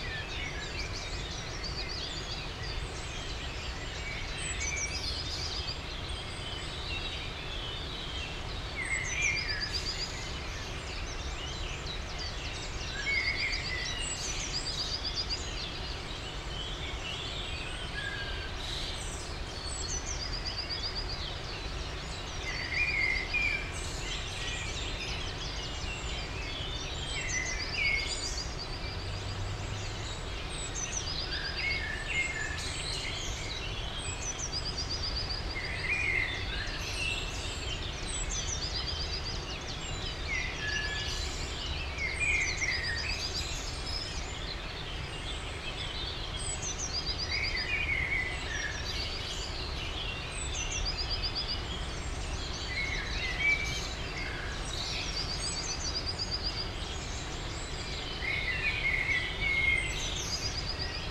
V Rokli, Radčice, Liberec, Česko - Dawn chorus
Early morning in the garden on the slopes of Jizera Mountains.